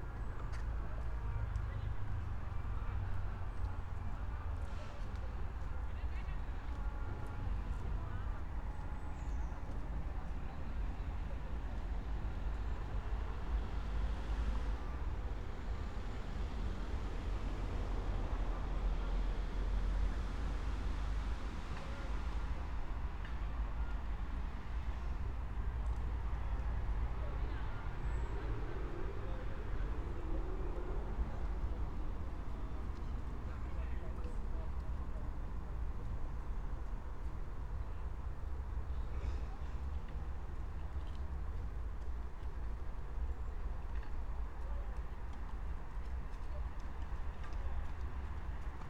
Brno, Lužánky - park ambience
11:33 Brno, Lužánky
(remote microphone: AOM5024/ IQAudio/ RasPi2)
Jihomoravský kraj, Jihovýchod, Česko, 29 September 2021, ~12:00